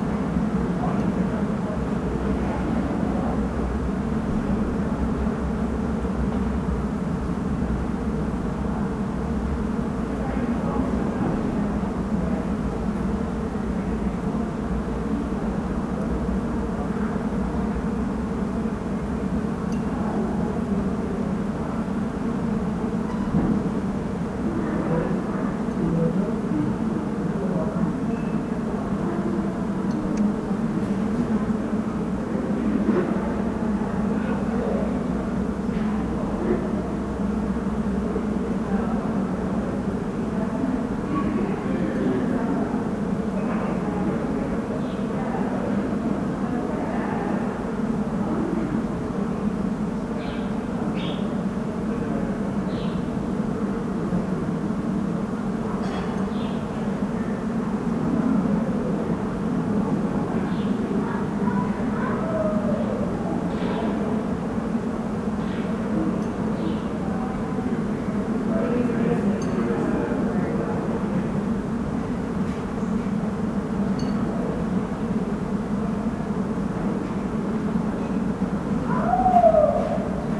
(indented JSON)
{
  "title": "Schwartzkopffstraße, Berlin, Germany - Kirschbaum mit Bienen und anderen Insekten",
  "date": "2020-04-12 13:00:00",
  "description": "Ein Sonntagmittag während des Corona-Lockdowns, ein Kirschbaum in voller Blüte mit, offenbar, Tausenden Insekten, vor allem Honigbienen.\nA Sunday noon during the Corona-lockdown, thousands of all kinds of bees in a fully flowering cherry-tree.\nUna domenica al mezzogiorno durante il cosi detto lockdown, migliaia di una grossa varieta di api in un albero di ciliege.",
  "latitude": "52.53",
  "longitude": "13.38",
  "altitude": "38",
  "timezone": "Europe/Berlin"
}